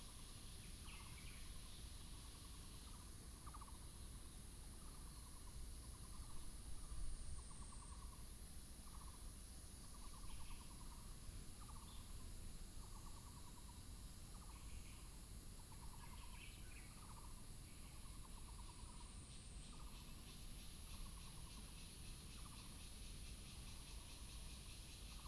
{"title": "風尾坑, Fengweikeng, Guishan Dist. - Small countryside", "date": "2017-08-07 17:29:00", "description": "Small countryside, Birds sound, Cicada cry", "latitude": "25.03", "longitude": "121.33", "altitude": "217", "timezone": "Asia/Taipei"}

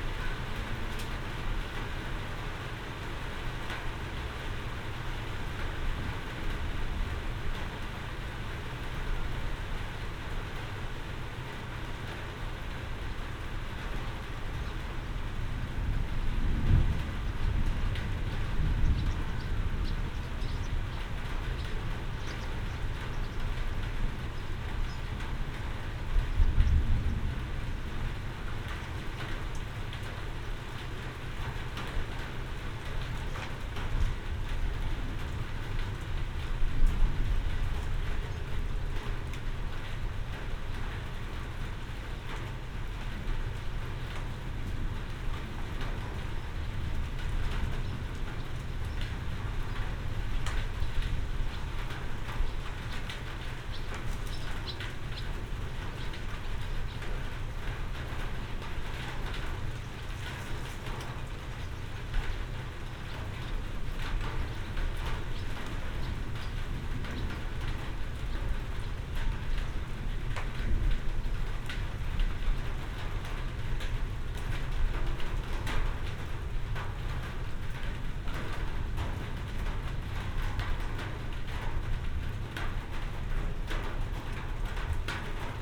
{"title": "workum, het zool: in front of marina building - the city, the country & me: marina building, under tin roof", "date": "2012-08-01 20:41:00", "description": "rain hitting a tin roof, approaching thunderstorm, kids running over berth\nthe city, the country & me: august 1, 2012\n99 facets of rain", "latitude": "52.97", "longitude": "5.42", "altitude": "255", "timezone": "Europe/Amsterdam"}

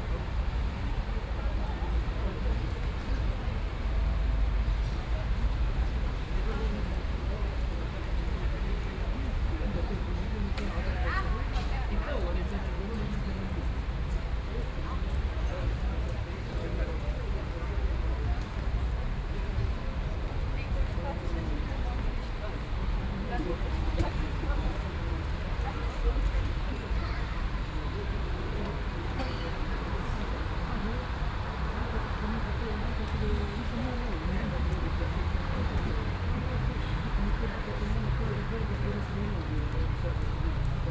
{"title": "Löhrrondell, square, Koblenz, Deutschland - Löhrrondell 1", "date": "2017-05-19 14:30:00", "description": "Binaural recording of the square. First of several recordings to describe the square acoustically. The 19th of May 2017 was a rainy day, the cars are quite loud.", "latitude": "50.36", "longitude": "7.59", "altitude": "79", "timezone": "Europe/Berlin"}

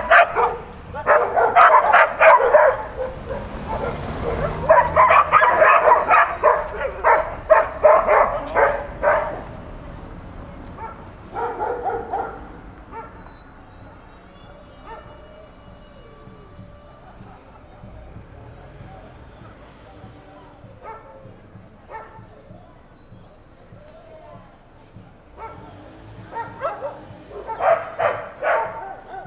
{"title": "Plaza el Descanso, Valparaíso, Chile - dogs attacking a car", "date": "2015-11-26 21:45:00", "description": "Valparaíso is a city of dogs, they're all over the place. Here at Plaza el Descanso, an bunch of them seems to have fun chasing cars at night. It's not clear what exactly attracts them, maybe the sound of the brakes, tyres or something inaudible to humans, however, they attack really tough, biting the wheels jumping against he running vehicle. People seem to be used to this spectacle, it doesn't draw much attention at all.", "latitude": "-33.04", "longitude": "-71.63", "altitude": "51", "timezone": "America/Santiago"}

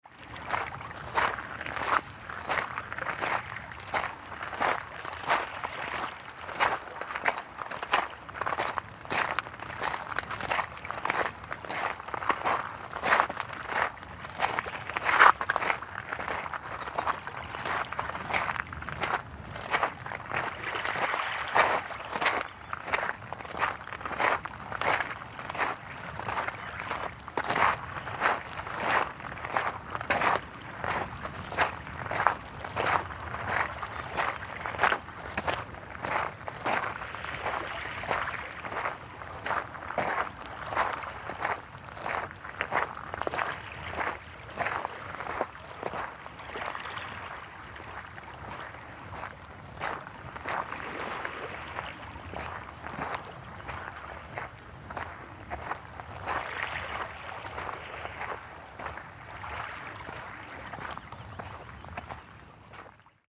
{"title": "Steps to pebble beach, White Sea, Russia - steps to pebble beach", "date": "2014-06-11 20:30:00", "description": "Steps to pebble beach.\nЗвук шагов человека идущего по галечному пляжу.", "latitude": "65.32", "longitude": "39.75", "altitude": "12", "timezone": "Europe/Moscow"}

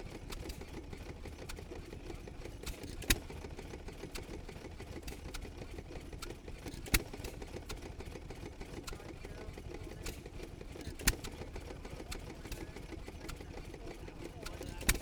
{"title": "Welburn, York, UK - amanco choreboy 1924 ...", "date": "2022-07-26 12:30:00", "description": "amanco chore boy 1924 stationary engine ... hit and miss open crank engine ... 1 and 3 quarter hp ... used as water pump ... corn sheller ... milking machines ... washing machines ... on display at the helmsley show ...", "latitude": "54.26", "longitude": "-0.96", "altitude": "47", "timezone": "Europe/London"}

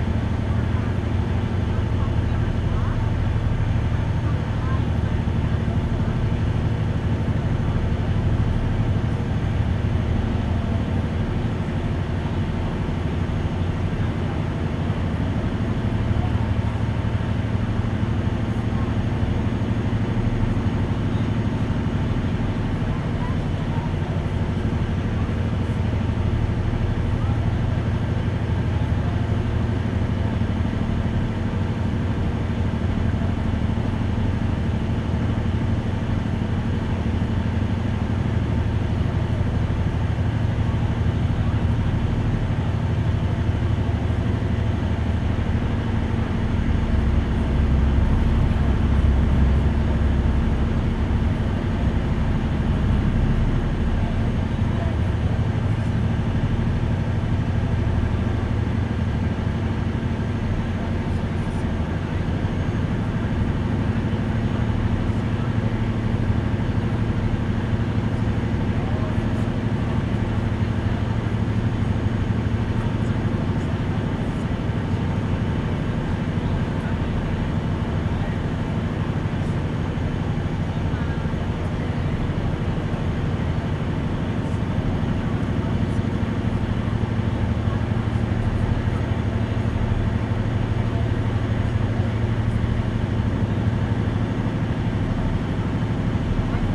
{"title": "Toronto Division, ON, Canada - Ferry from Toronto Islands", "date": "2019-08-29 21:45:00", "description": "Ferry (Ongiara) from Hanlan's Point terminal to mainland terminal.", "latitude": "43.63", "longitude": "-79.38", "altitude": "73", "timezone": "America/Toronto"}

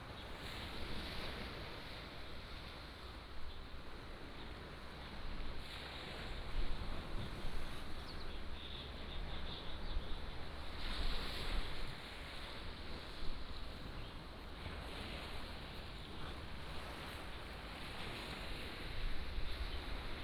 {"title": "白馬尊王廟, Beigan Township - Small pier", "date": "2014-10-15 11:03:00", "description": "Small pier, Sound of the waves, Birds singing", "latitude": "26.21", "longitude": "119.97", "altitude": "22", "timezone": "Asia/Taipei"}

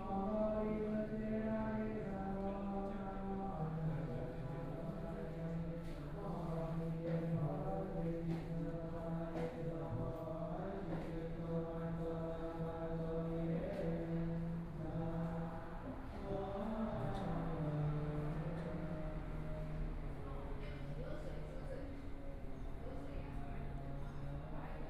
{"title": "臨濟護國禪寺, Taipei City - Walking in the temple", "date": "2014-02-08 16:03:00", "description": "Walking in the temple, Chanting voices, Aircraft flying through, Birds singing, Binaural recordings, Zoom H4n+ Soundman OKM II", "latitude": "25.07", "longitude": "121.52", "timezone": "Asia/Taipei"}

